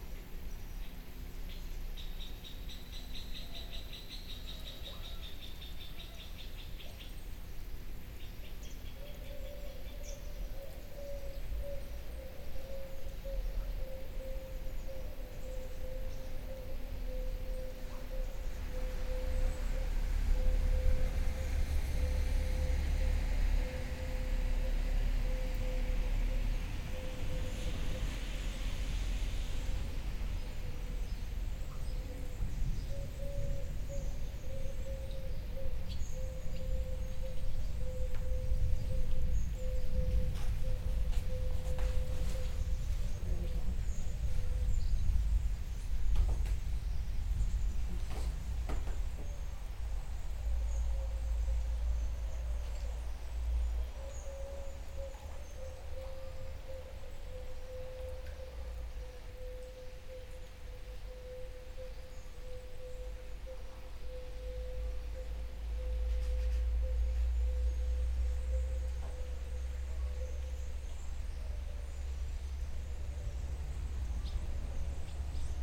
Chamesson, France - Bells ringing in a small village
In this small village of the Burgundy area, we are in a very old wash-house, renovated by township. Nearby the Seine river, we are waiting the rains stops, it makes a inconspicuous music on the Seine water, absolutely dull like a lake here. At 12 a.m., the bell is ringing time and angelus. It's a lovely ambiance.
31 July 2017